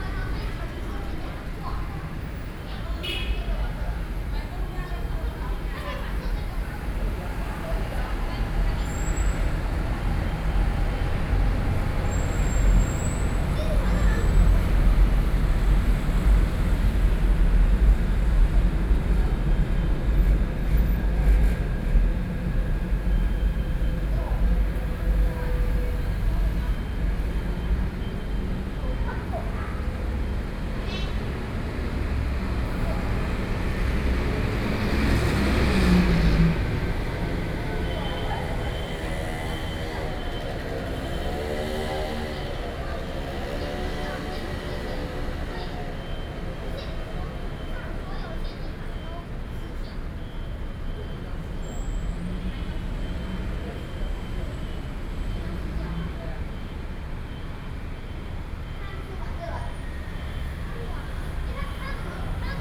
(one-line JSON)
{"title": "Beitou, Taipei - Below the track", "date": "2013-07-22 19:46:00", "description": "Commuting time, Sony PCM D50 + Soundman OKM II", "latitude": "25.14", "longitude": "121.50", "altitude": "17", "timezone": "Asia/Taipei"}